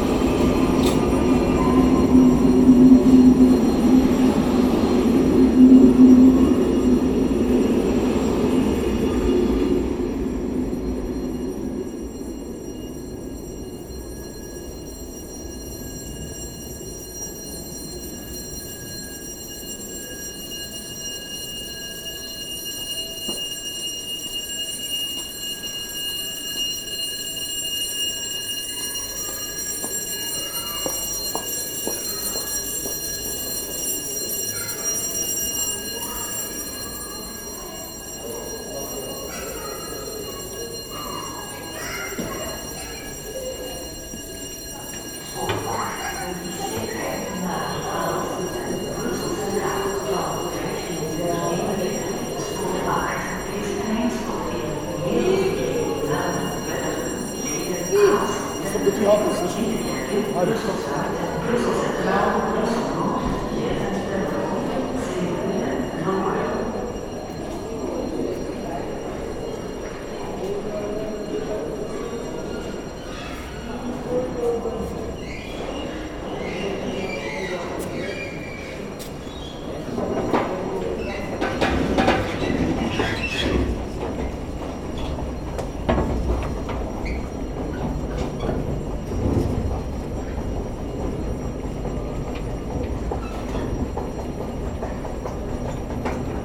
Gent, België - Gent station
The old Sint-Pieters station of Ghent. Lot of intercity trains coming, and after, an escalator in alarm. Noisy ambience for a Saturday afternoon.
Gent, Belgium, 16 February 2019, 15:45